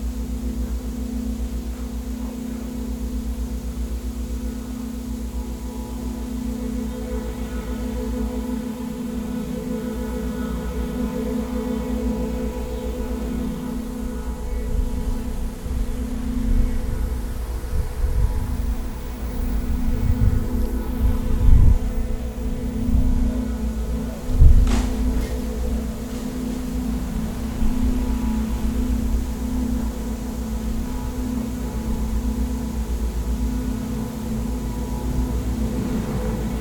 trafacka, sound performace
sound of the music performance penetrating the walls of the building mixing with ambience.